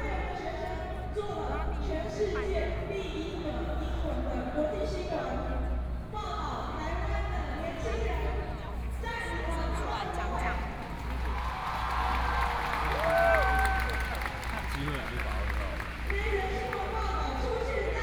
Jinan Rd., Zhongzheng Dist. - speech
Occupy Taiwan Legislature, Walking through the site in protest, Traffic Sound, People and students occupied the Legislature
Binaural recordings